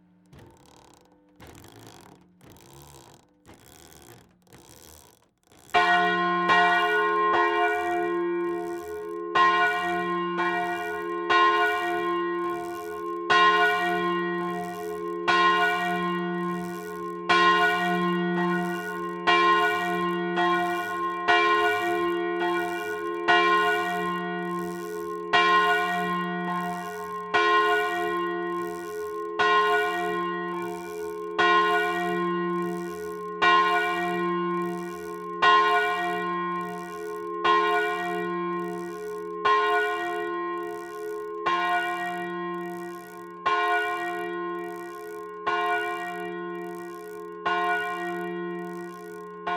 {
  "title": "Rue du Ctre, Tourouvre au Perche, France - Randonnai - Église St-Malo",
  "date": "2016-10-16 10:30:00",
  "description": "Randonnai (Orne)\nÉglise St-Malo\nla volée",
  "latitude": "48.65",
  "longitude": "0.68",
  "altitude": "241",
  "timezone": "Europe/Paris"
}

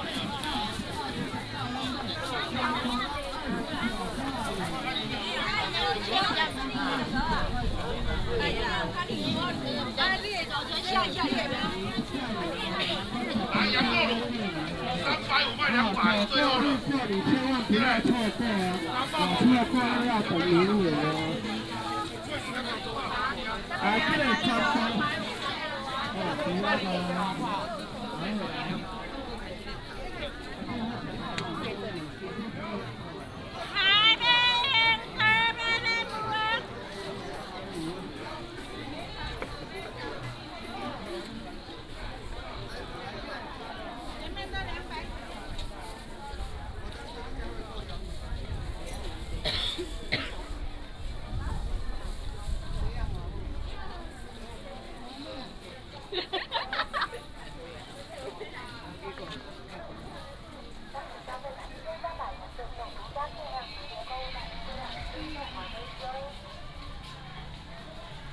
{
  "title": "Aiguo St., Douliu City - Sellers selling sound",
  "date": "2017-01-25 10:44:00",
  "description": "Walking in the market, Sellers selling sound",
  "latitude": "23.71",
  "longitude": "120.54",
  "altitude": "57",
  "timezone": "Asia/Taipei"
}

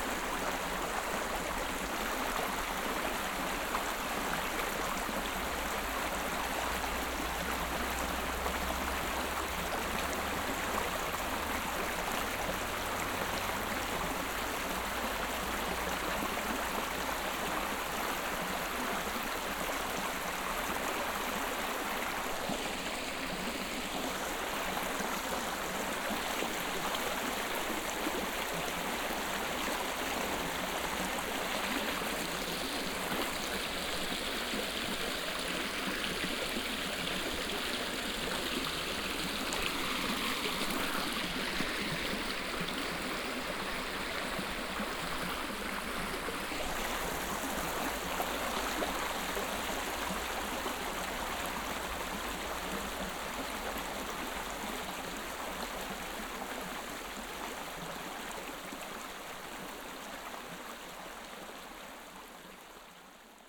{
  "title": "clervaux, welzerstross, small stream and train",
  "date": "2011-08-02 19:31:00",
  "description": "At a small stream close to the railway track. A train passing and hooting a signal before entering a nearby mountain tunnel.\nProject - Klangraum Our - topographic field recordings, sound objects and social ambiences",
  "latitude": "50.04",
  "longitude": "6.02",
  "timezone": "Europe/Luxembourg"
}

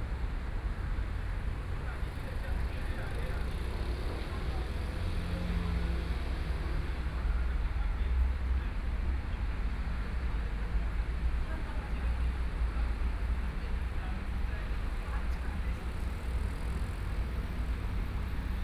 {
  "title": "Zhuwei, New Taipei City - Park entrance",
  "date": "2013-10-26 20:01:00",
  "description": "Traffic Noise, Far from being applied fireworks, MRT trains through, Binaural recordings, Sony PCM D50 + Soundman OKM II",
  "latitude": "25.14",
  "longitude": "121.46",
  "altitude": "9",
  "timezone": "Asia/Taipei"
}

